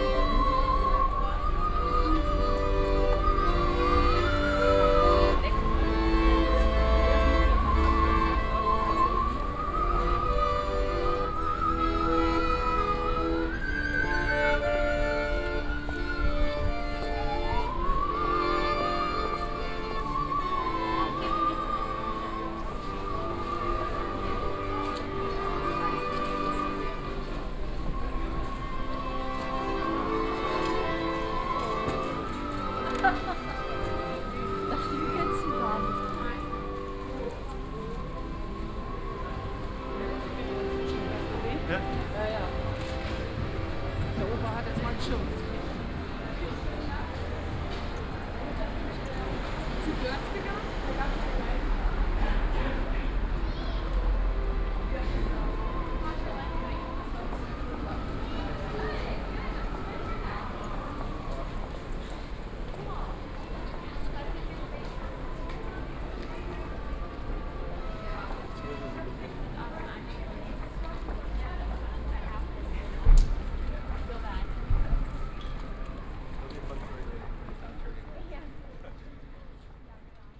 a bit of a weird version of the song, interpreted by two russian musicians, who were obviously in a good mood.
(PCM D50, OKM2)
23 December 2013, 16:25